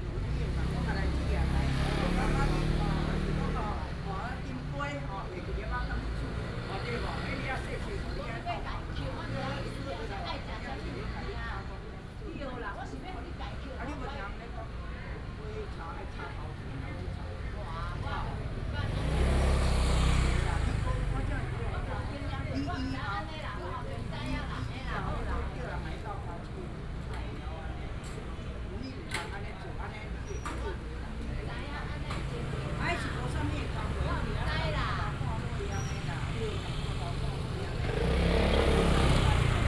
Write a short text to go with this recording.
In the square of the temple, Traffic sound, Chatting between the vendors and the people